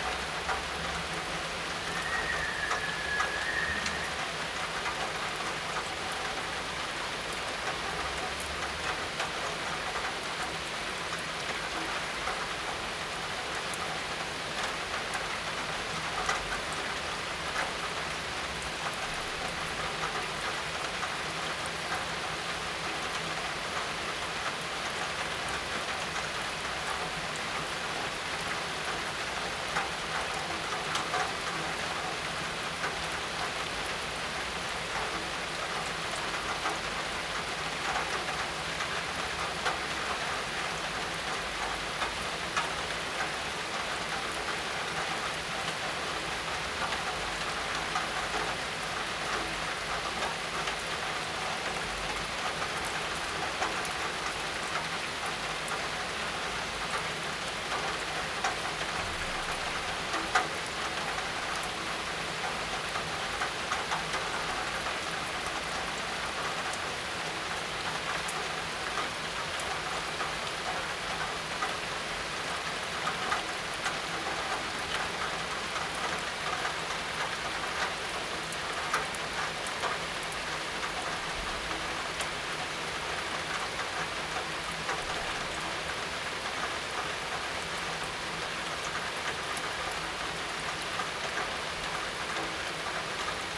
Solesmeser Str., Bad Berka, Germany - Rain in the Neighborhood 2- Binaural
Binaural recording with Soundman OKM and Zoom F4 Field Recorder. Best experienced with headphones.
Occasional vehicular engine in sound.